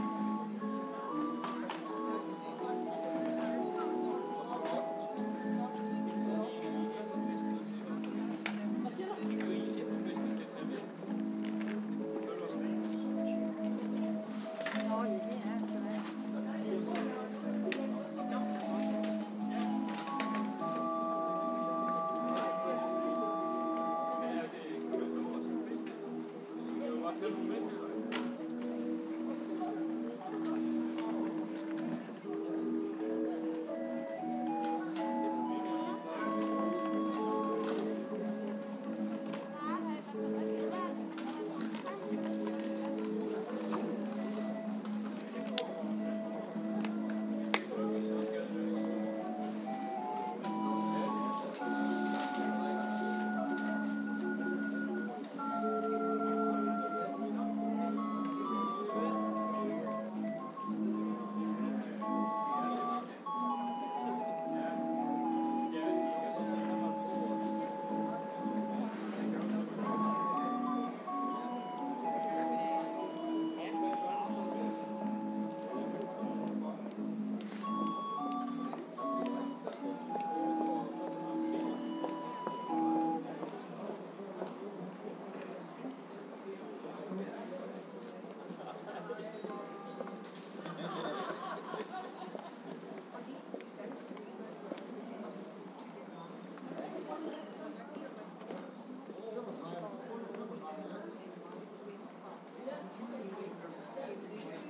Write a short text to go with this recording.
Second part of my time in the market